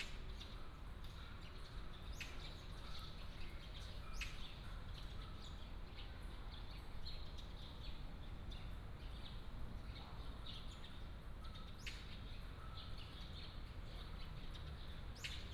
in the park, Traffic sound, A variety of birds call, The snooker sound came from the room